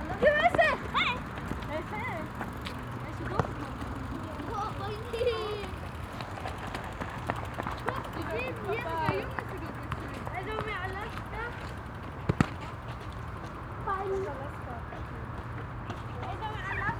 Krupp-Park, Berthold-Beitz-Boulevard, Essen, Deutschland - essen, thyssen-krupp park, soccer playground
Im neu eingerichteten Thyssen-Krupp Park an einem Ballspielplatz. Der Klang von fussballspielenden Kindern. Im Hintergrund Fahrzeuggeräusche.
Inside the new constructed Thyssen-Krupp park at a ball-playground. The sound of children playing soccer. In the distance motor traffic.
Projekt - Stadtklang//: Hörorte - topographic field recordings and social ambiences
19 April 2014, 15:30